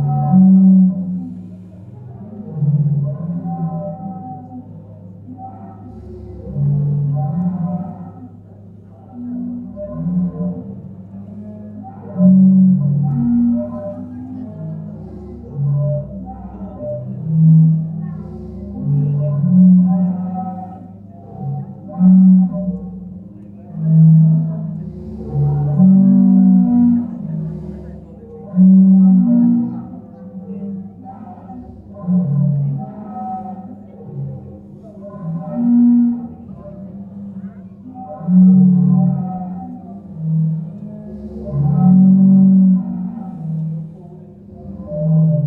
Zadar, Sea Organ
recorded manually inside the organ during a calm sea. WLD